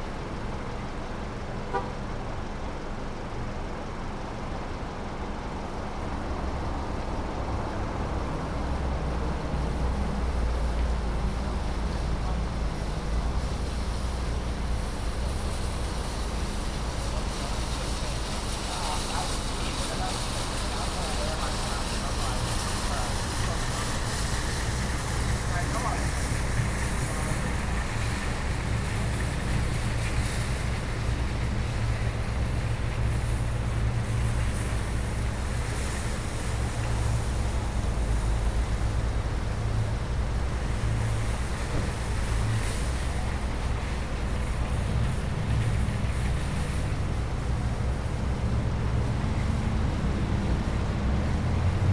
Lady's Island Drive, Beaufort, SC, USA - Supermarket Parking Lot
The parking lot of a Publix supermarket. Most of the sounds heard are traffic-related, although there are some other sounds as well.